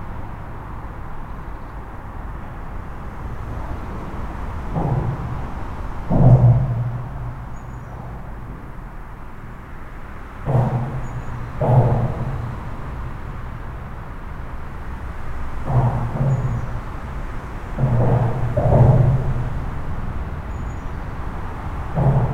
Court-St.-Étienne, Belgique - N25 à Suzeril
A dense trafic on the local highway, called N25. The bangs sounds are coming from a bridge.